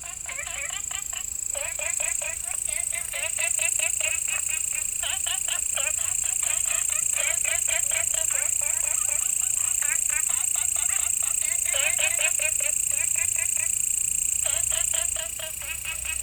青蛙阿婆ㄟ家, 埔里鎮桃米里, Taiwan - Walking in the bush
Ecological pool, Frog chirping, Insect sounds, walking In Bed and Breakfasts
Nantou County, Puli Township, 桃米巷11-3號